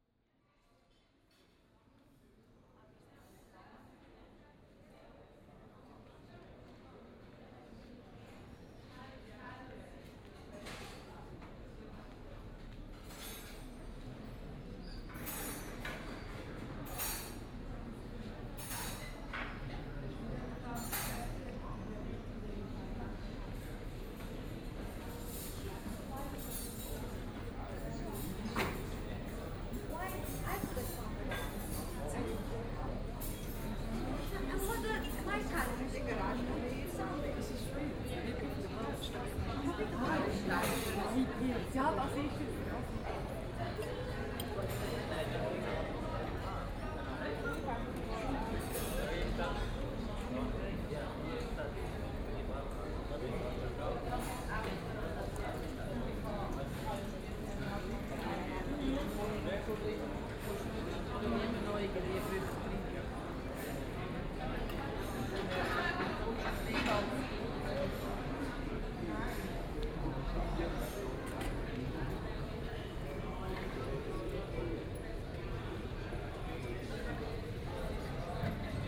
Aarau, Altstadt, Schweiz - Rathausgasse
Street atmosphere in the pedestrian zone of the old part of Aarau, mainly people in restaurants on the street, note the specific echo of the sound that is produced by the specific roofs of the city
Aarau, Switzerland